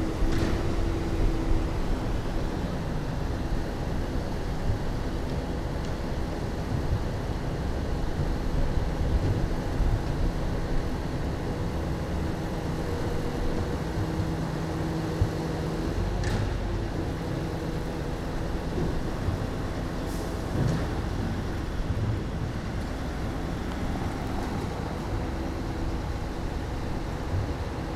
leipzig, nathanaelkirche. kindergruppe und die müllabfuhr.
vor der nathanaelkirche. eine kindergruppe geht vorbei und dann kommt ein fahrzeug von der müllabfuhr vorbei.